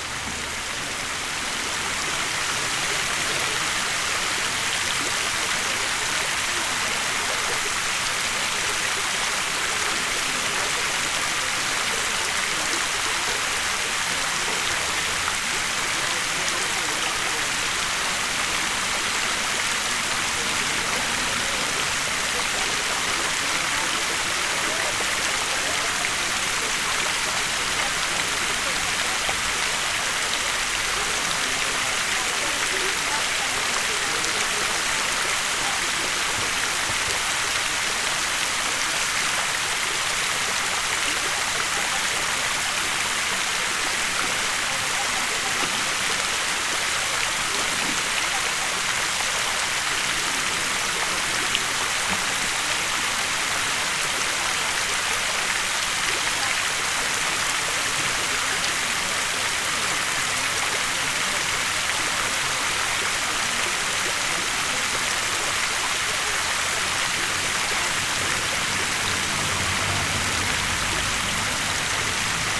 A fountain in the middle of Vokiečių street, Vilnius. Through the constant noise of the fountain, chatter of a group of people and other noises can be heard nearby. Recorded with ZOOM H5.
Vilniaus apskritis, Lietuva